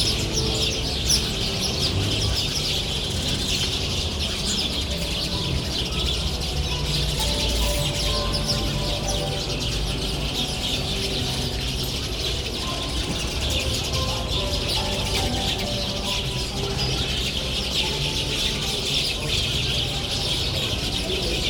Gyumri, Arménie - Sparrows
In the main pedestrian road of Gyumri, a tree has one thousand sparrows. On the evening, it makes a lot of noise !
Gyumri, Armenia, September 2018